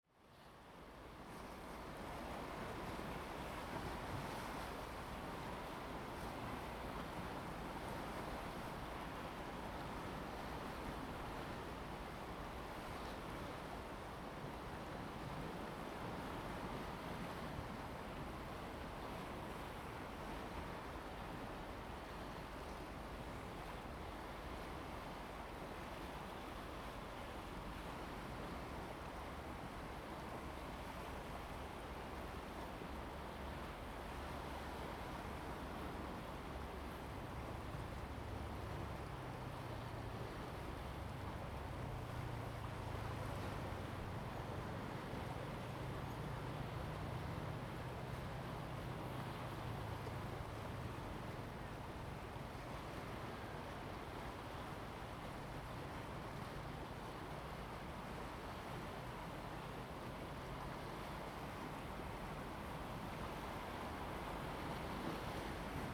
落日亭, Hsiao Liouciou Island - On the coast
On the coast, Wave and tidal
Zoom H2n MS+XY